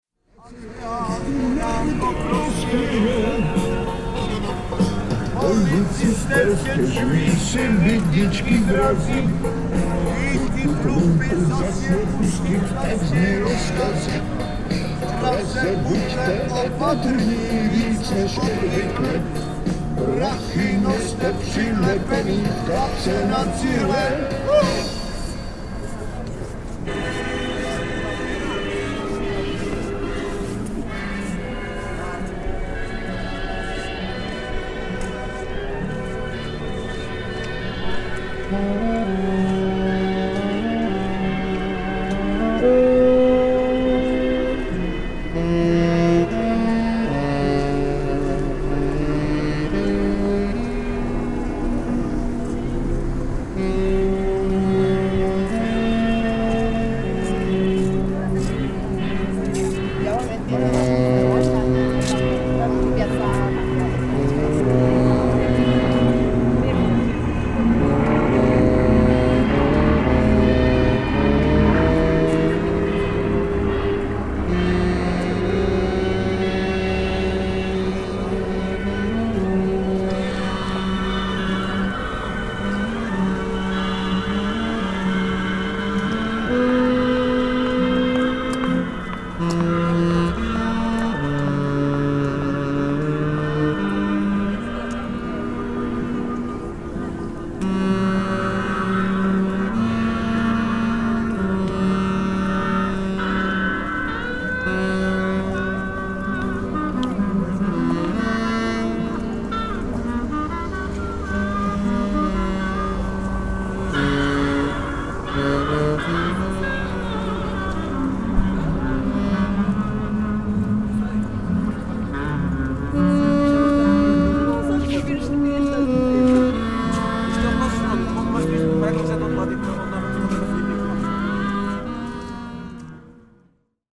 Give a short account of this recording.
A wonderful old guy busking in Prague.